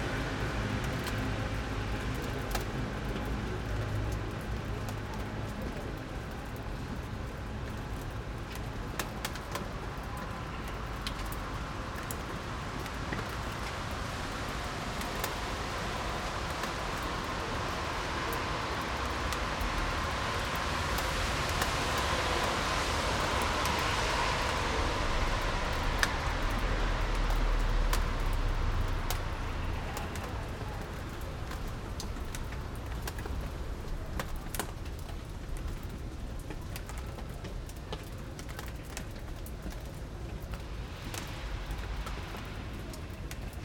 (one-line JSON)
{"title": "Avenue Jean Jaurès, Paris, France - Confinement après la pluie, sur le balcon", "date": "2020-05-01 14:40:00", "description": "Line Audio CM4 ORTF recording\nOn balcony 5th floor after rain", "latitude": "48.88", "longitude": "2.38", "altitude": "62", "timezone": "Europe/Paris"}